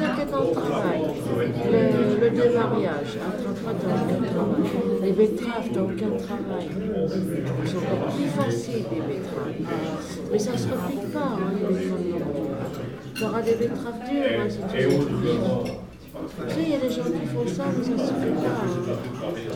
Mont-Saint-Guibert, Belgique - Comme chez vous
In a restaurant, called "comme chez vous", which means you're "like at home".